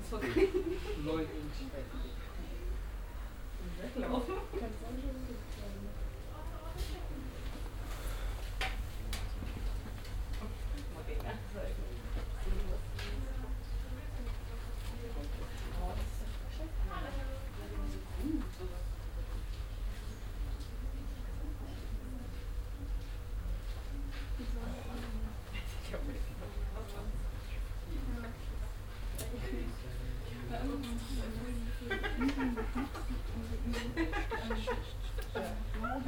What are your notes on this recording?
kunden im sex shop, nachmittags, gedämpfte aber amüsierte unterhaltungen, im hintergrund o-töne aus einem film (non sex), soundmap nrw: social ambiences/ listen to the people - in & outdoor nearfield recordings